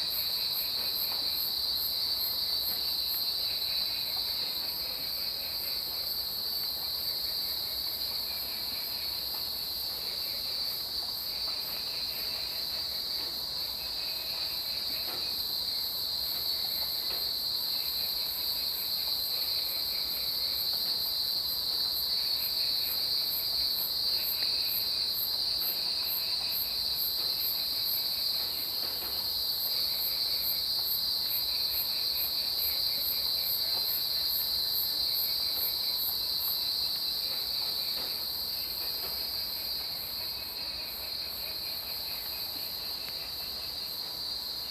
綠屋民宿, 埔里鎮桃米里 - Cicadas cry
In the morning, Birds singing, Cicadas cry, Frog calls, Rainy Day
Zoom H2n MS+XY
Nantou County, Taiwan, 27 August 2015, 06:12